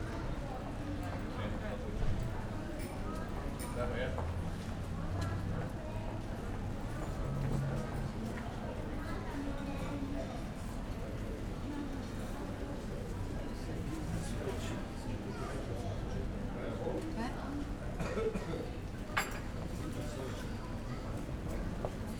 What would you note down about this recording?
in a street cafe, after many km on a bike, enjoying the murmur and relaxed atmosphere in Slovenska street. (SD 702 DPA4060)